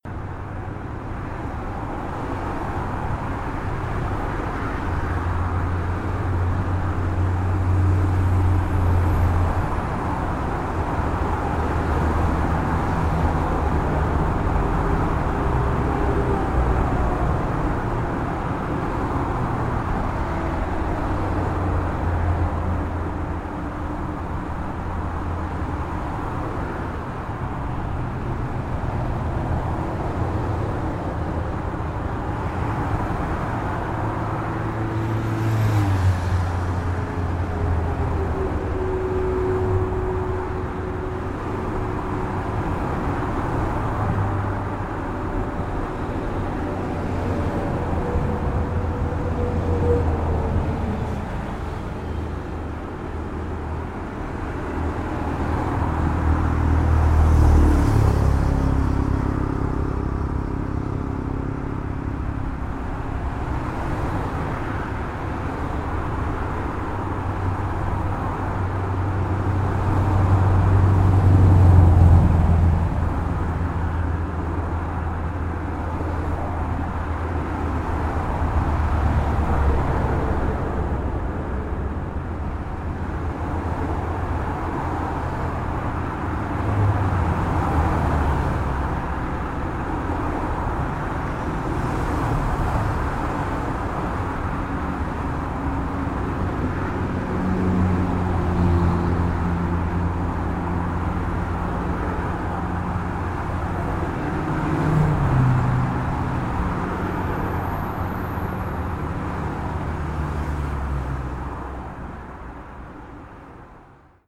Τανάγρας, Σχηματάρι, Ελλάδα - ΗΧΟΤΟΠΙΟ ΕΘΝΙΚΗΣ ΟΔΟΥ
ηχογραφηση με smartphone Xiaomi Redmi Note 9 Pro στην εθνική οδό στο ύψος του Σχηματαρίου